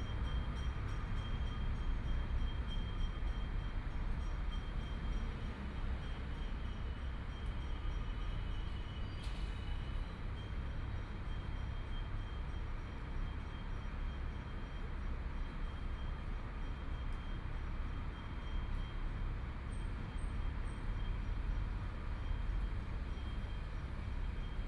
Holy Trinity Cathedral, Shanghai - Sitting in the park
Traffic Sound, Bell sounds, Riding a bicycle bell sounds are everywhere to pick up messages can be recovered, Binaural recording, Zoom H6+ Soundman OKM II
Shanghai, China, 25 November, 15:43